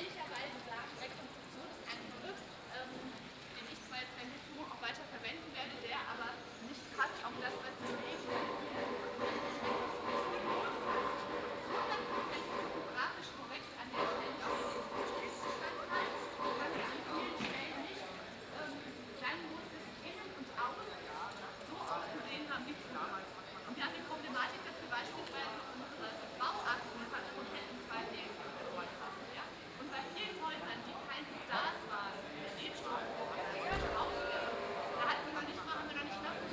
Hühnermarkt, Frankfurt am Main, Deutschland - 14th of August 2018 Teil 3
Third part of the walk through the newly built and contested area of the 'old town' in Frankfurt. A guide is talking about reconstruction as a technique or rebuilding an area - the churchbells are tolling, people talking about old and new, about the underground, some construction workers are discussing about doors and if they are open or closed. All recordings are binaural.
Frankfurt am Main, Germany, 14 August 2018